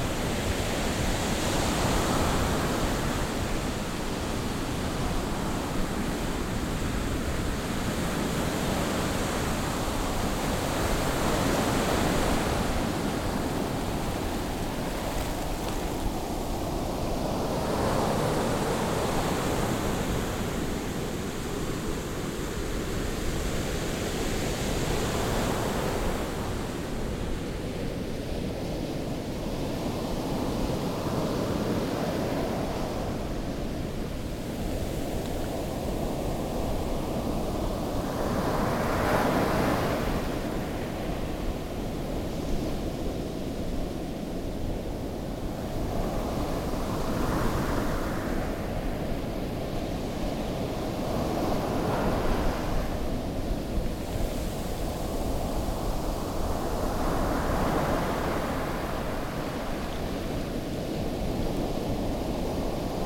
Clifton Park, Cromer, UK - Ocean Waves and Lapping water
Ocean waves and lapping water around feet in the sea foam on Cromer beach.
Zoom F1 and Zoom XYH-6 Stereo capsule
13 June 2020, 14:37